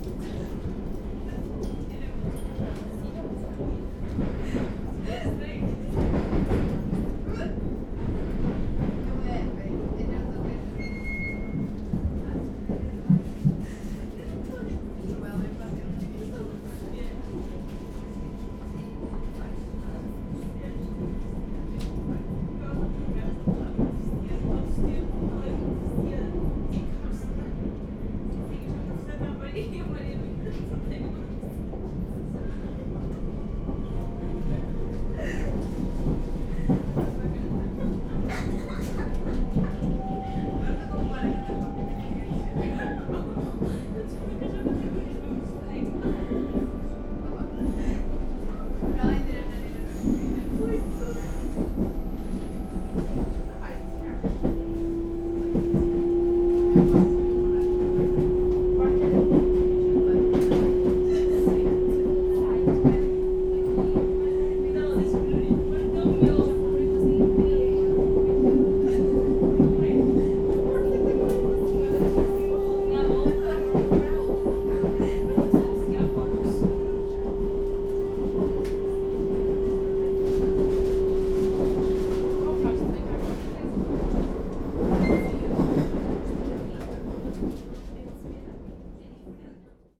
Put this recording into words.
on a train departing towards Guimaraes. sounds of the train. a group of teenage girls talking and laughing.